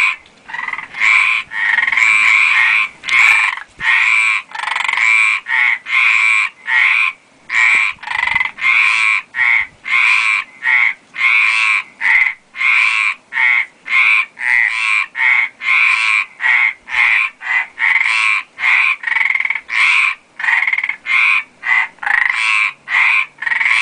VGR; Frosch im Wassergraben - Gomera; VGR; Feld
Froschkonzert im Wassergraben